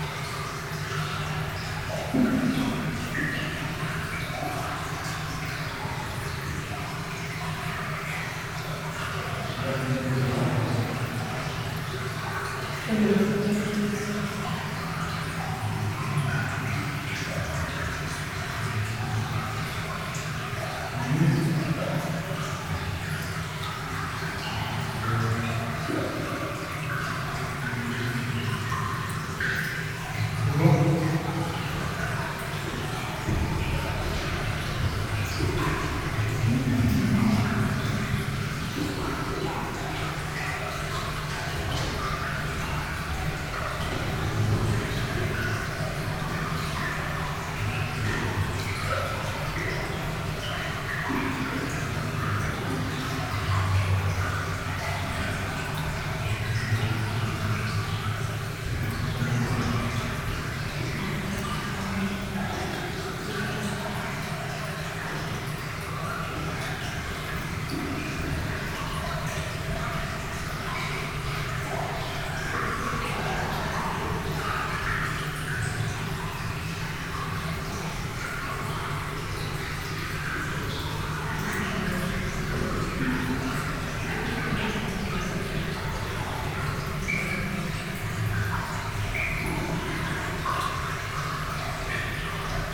Feeling the remoteness in the underground mine. We are far from everything and deeply underground. Water is falling in the tunnel in a distant and melancholic constant rain.